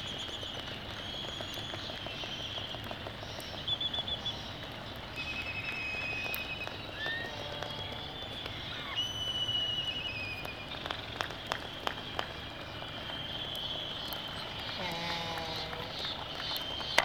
United States Minor Outlying Islands - laysan albatross soundscape ...
Charlie Barracks ... Sand Island ... Midway Atoll ... mic 3m from adult male on nest ... laysans ... whinnying ... sky moo ... groaning ... bill clappering ... other birds ... white terns ... black-footed albatross ... bonin petrels ... black noddy ... Sony ECM 959 one point stereo mic to Sony Minidisk ...